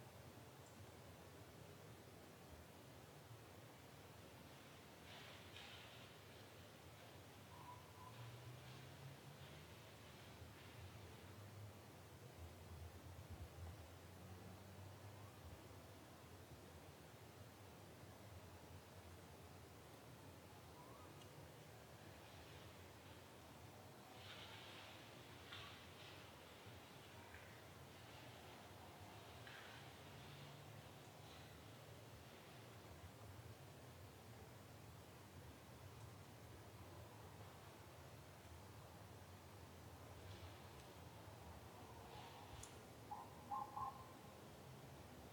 Durweston, Dorset, UK - Nightime Rustling
Deer, badgers and other woodland wildlife go about there business at 1am.
31 March, 01:01